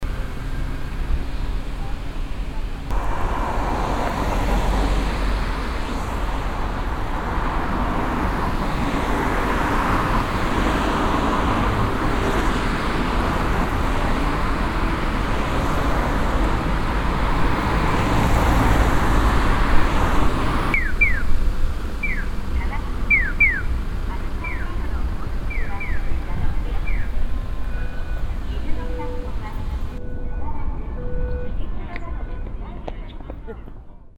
yokohama, harbour park, traffic sign
Traffic on the street in the evening.
The bird like sound of two traffic signs followed by a warning sign. Unfortunately a windy day.
international city scapes - topographic field recordings and social ambiences